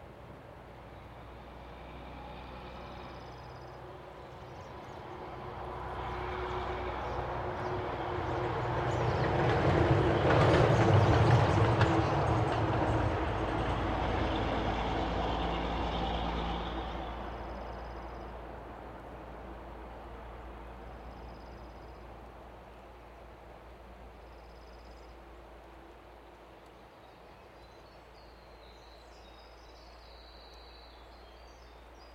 Loggin Truck Passing, Liscomb Game Sanctuary
Logging truck passing through the Liscomb Game Sanctuary. April 24th 2010 1143hrs.
Nova Scotia, Canada